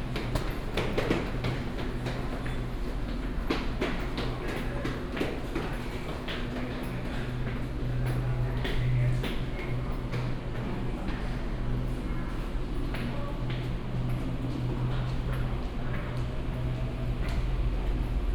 Changhua Station, Taiwan - Walk in the Station
Walk into the Station platform, Station Message Broadcast
1 March 2017, 08:26